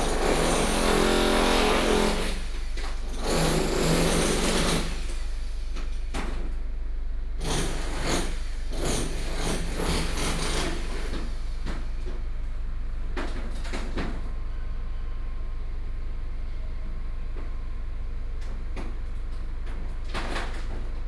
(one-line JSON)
{"title": "Beitou, Taipei - Being renovated house", "date": "2012-10-15 09:37:00", "latitude": "25.14", "longitude": "121.49", "altitude": "23", "timezone": "Asia/Taipei"}